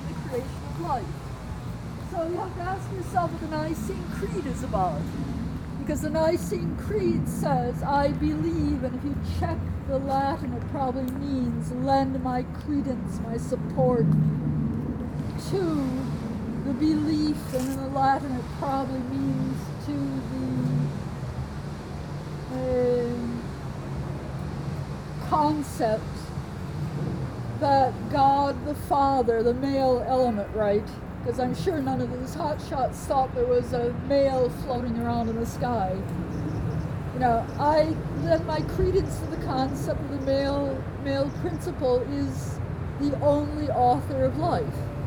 (binaural)
came across a woman living in a makeshift tent in the bushes of this back street. every day she was waling along the road, carrying a bunch of weeds and a stick, out of the blue talking to passer-bys about different concepts. each "listener" heard a different story. she was smoothly changing topics in a blink of an eye as if it was one story. some people were running away scared of her, some were trying to get into the conversation. you could tell she had gone off her rocker yet her words and ideas were coherent and educated although. sometimes very abstract and out of this world. here only a short excerpt.